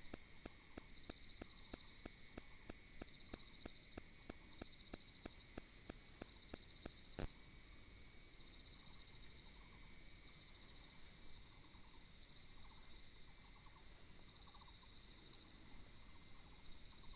199縣道7.5K, Mudan Township - Bird and Insect sound
Bird song, Insect noise, Small mountain road, Close to the Grove, traffic sound
Binaural recordings, Sony PCM D100+ Soundman OKM II
Pingtung County, Mudan Township, 199縣道